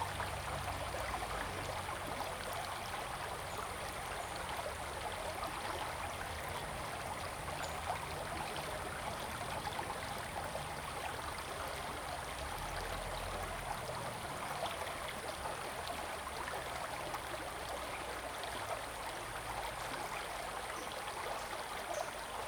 中路坑溪, 桃米里 Puli Township - streams
streams sound
Zoom H2n MS+XY
Nantou County, Puli Township, 投68鄉道73號, 5 May